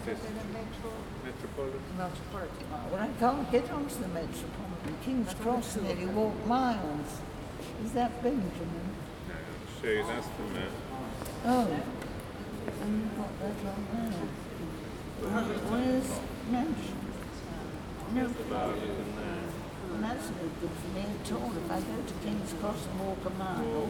A second recording walking through the Royal Academy Charles I: King and Collector exhibition.
Recorded on a Zoom H2n
Mayfair, London, UK, 7 April 2018, ~11:00